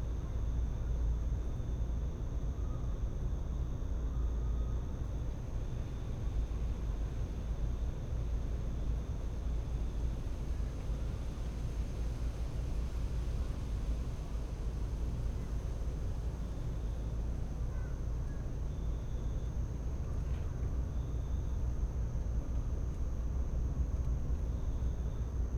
World Listening Day, WLD, Scoop walking in the weeds next door, barking, freight train passes, wind, whistling, kids screaming, crickets
IL, USA, 18 July, 21:41